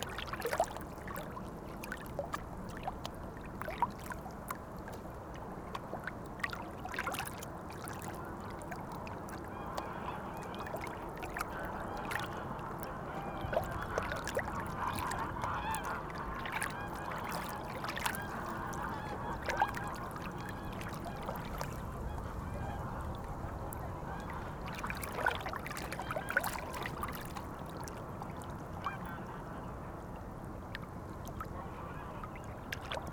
Wavelets on the Louvain-La-Neuve lake. Just near, people tan because it's a very hot day.
Ottignies-Louvain-la-Neuve, Belgium, 10 July, 16:10